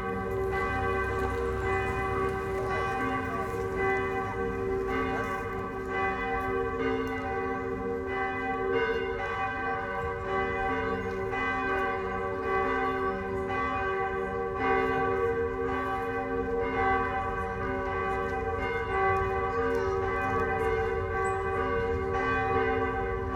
bells from two churches at reuterplatz, early summer evening.
Berlin, Germany